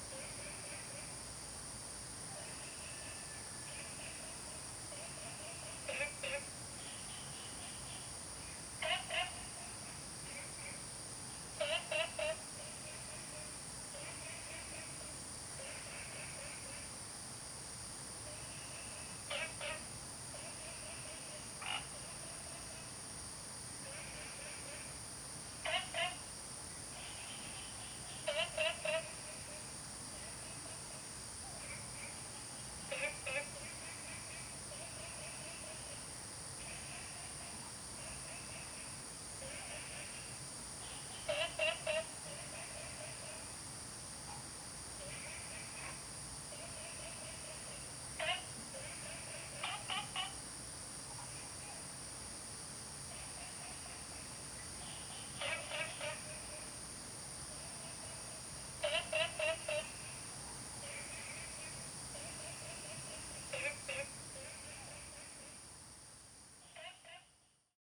Taomi Ln., Puli Township - Early morning
Early morning, Frog calls, Dogs barking
Zoom H2n MS+XY